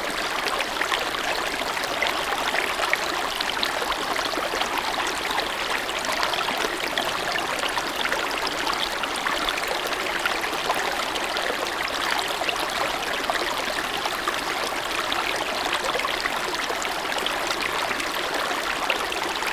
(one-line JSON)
{"title": "Simmerath, Deutschland - Bachplätschern / brook rippling", "date": "2014-02-22 12:00:00", "description": "Auf einer Wanderung über die Dreiborner Hochebene habe ich hier dem durch den Wald plätschernden Bach kurz das Mikrophon (Zoom H1) hingehalten.\nOn a hike through the Dreiborner plateau I showed the microphone to a little Brook through the forest.", "latitude": "50.55", "longitude": "6.36", "timezone": "Europe/Berlin"}